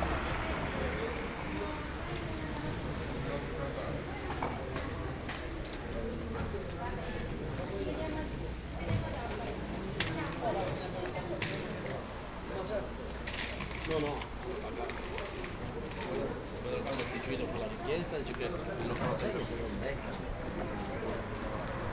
Ascolto il tuo cuore, città. I listen to your heart, city. Several chapters **SCROLL DOWN FOR ALL RECORDINGS** - Ferragosto noon bells in the time of COVID19 Soundwalk
Ferragosto noon bells in the time of COVID19" Soundwalk
Chapter CLXXXI of Ascolto il tuo cuore, città. I listen to your heart, city
Sunday, August 15th, 2021, San Salvario district Turin, walking to Corso Vittorio Emanuele II and back, crossing Piazza Madama Cristina market. More than one year and five months after emergency disposition due to the epidemic of COVID19.
Start at 11:46 a.m. end at 00:33 p.m. duration of recording 37’27”
The entire path is associated with a synchronized GPS track recorded in the (kmz, kml, gpx) files downloadable here: